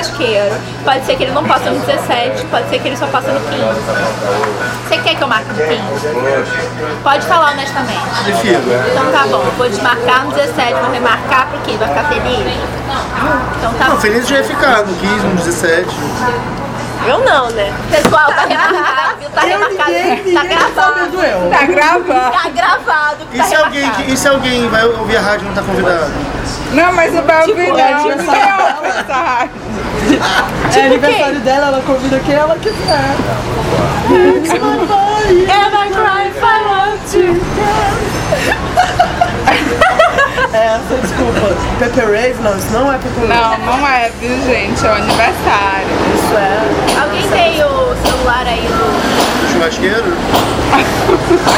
Ingá, Niterói - Rio de Janeiro, Brazil - Glauber's Bar
End of meeting in the Glauber's bar.